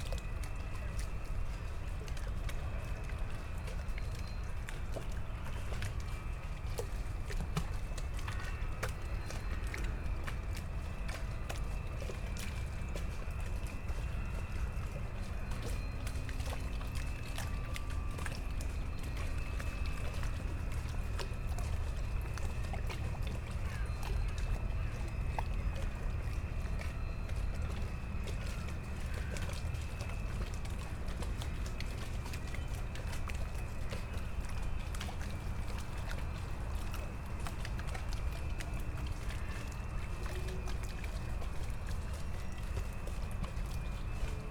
Berlin, Plänterwald, Spree - Spreepark soundscape, powerplant, ferris wheel
Saturday noon, the ice has gone quickly after a few mild days; waves of the Spree, always the sounds from the power station, after a minute the ferris wheel at the nearby abandonded funfair starts squeaking
(SD702, DPA4060)
Berlin, Germany, 8 February 2014